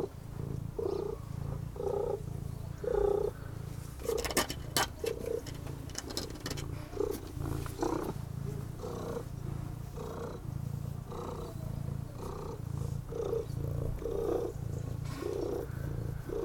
Pirovac, Ulica Augusta Cesarca, Kroatien - Purring cat
The neighbours tomcat purring, scratching on a wooden door, some birds, a car passing by, neighbours cleaning their terrasse